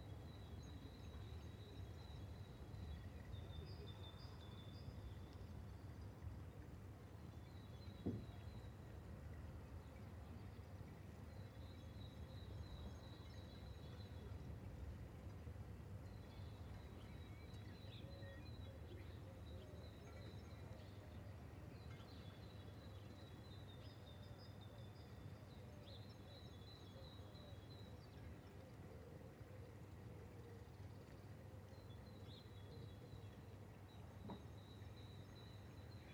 Rue de lArmide, La Rochelle, France - P@ysage Sonore - Landscape - La Rochelle COVID Flight of semi distant bells 9h
Bus, cars, pedestrians and at 4 ' > 9 o'clock, and flight of bells
4 x DPA 4022 dans 2 x CINELA COSI & rycote ORTF . Mix 2000 AETA . edirol R4pro